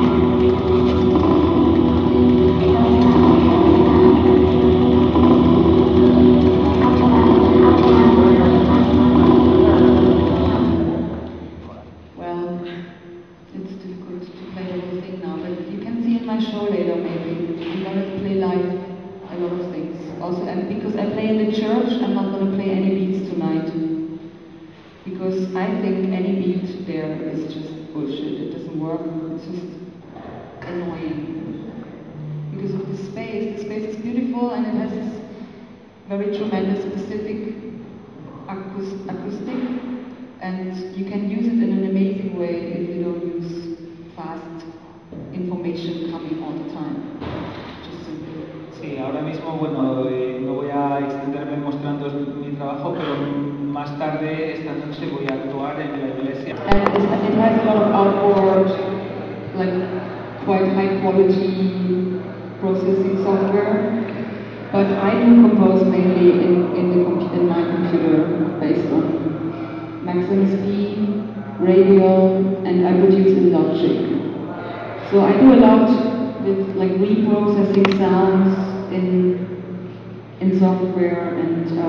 Gijon. LEV09
The poemproducer AGF (ANTYE GREIE) talking about her work in the cafeteria of the Laboral university.
2009/5/2. 21:12h.